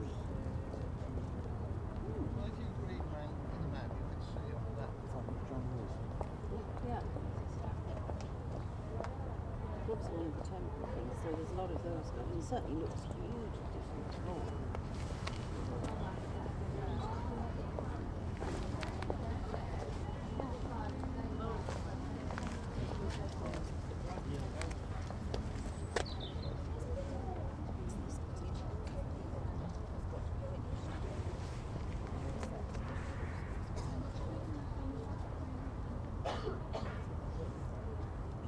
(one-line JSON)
{"title": "London Borough of Newham, UK - walk from Pudding Mill Lane train station to Olympic view platform", "date": "2012-03-14 12:18:00", "latitude": "51.54", "longitude": "-0.02", "altitude": "7", "timezone": "Europe/London"}